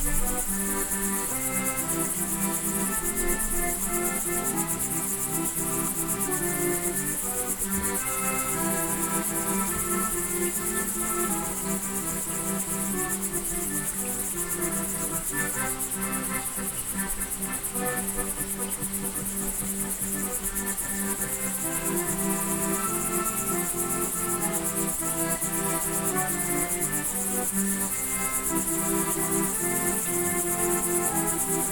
Lądek-Zdrój, Pologne - Accordion
The neighbour is playing accordion.